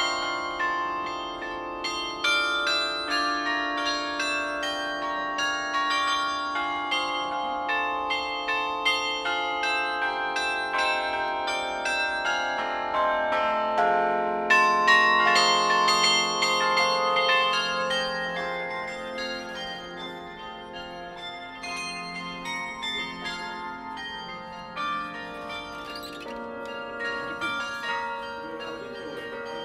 Prague, Czech Republic - zvonkohra / carillon
carillon during a ceremonial switching on of the christmas tree / zvonkohra počas slávnostného rozsvietenia vianočného stromu
Praha-Praha, Czech Republic